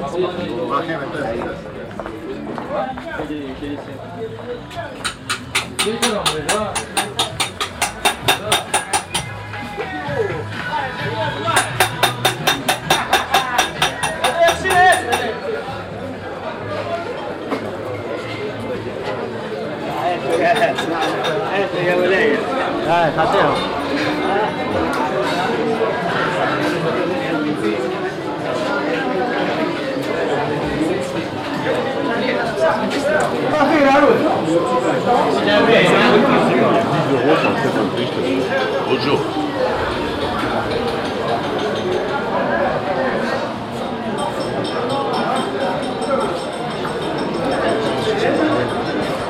Souks, Tunis, Tunesien - tunis, medina, souks, soundwalk 01
Entering the Souks in the morning time. The sound of feets walking on the unregualar stone pavement, passing by different kind of shops, some music coming from the shops, traders calling at people and birds chirp in cages.
international city scapes - social ambiences and topographic field recordings
3 May 2012, 09:30